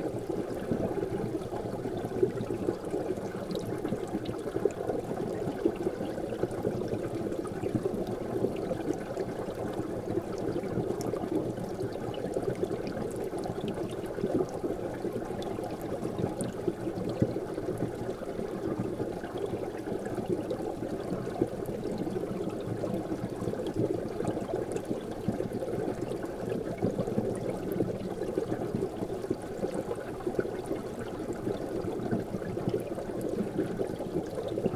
Lithuania, Utena, undersnow stream
a stream covered by snow
4 March 2013